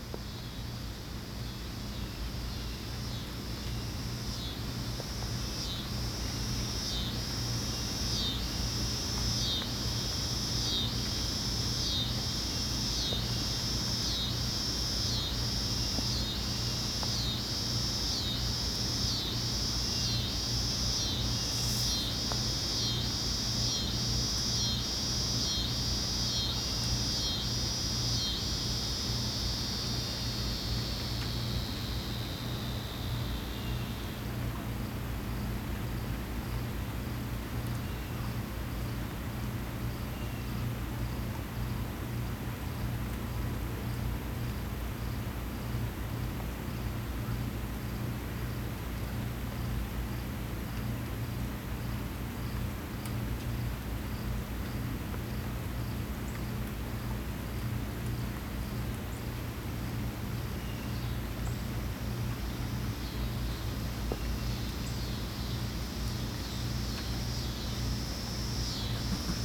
Rain, Cicadas and the Cricket Machine, Houston, Texas - Rain, Cicadas and the Cricket Machine
**Binaural recording**. Recorded at my sister's apt on a small lake as a memento before she moves out of the country. Cicadas, rain, ducks, and the ever-present air compressor that feeds an aerator in the "lake", emanating a round the clock drone and synthetic cricket chirp for all of the residents' year-round enjoyment.
CA-14 omnis (binaural) > DR100 MK2